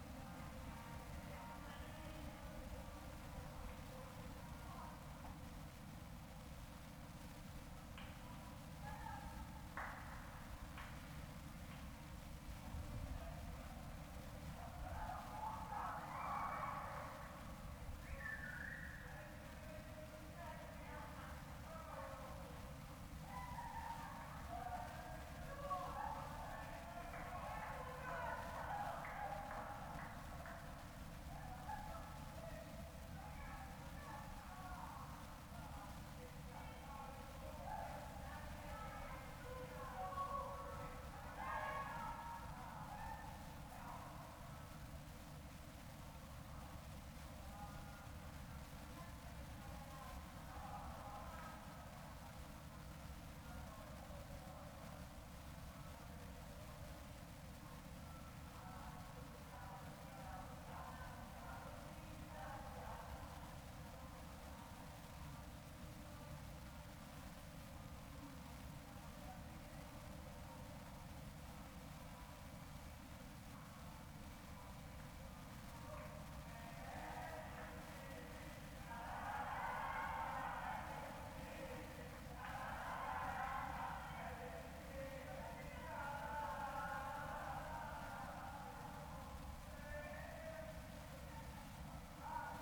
Ascolto il tuo cuore, città. I listen to your heart, city. Several chapters **SCROLL DOWN FOR ALL RECORDINGS** - Round midnight March 25 2020 Soundscape
"Round midnight March 25 2020" Soundscape
Chapter XXII of Ascolto il tuo cuore, città, I listen to your heart, city
Wednesday March 25th - Thursday 26nd 2020. Fixed position on an internal terrace at San Salvario district Turin, fifteen days after emergency disposition due to the epidemic of COVID19. Same position as previous recording.
Start at 11:35 p.m. end at 00:21 a.m. duration of recording 45'36''.